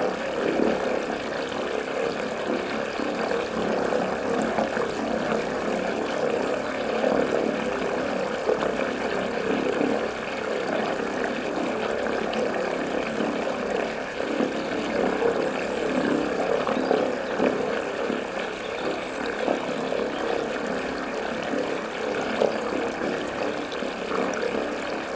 phasing water drain Tomar, Portugal
a small under water channel brings water into a fountain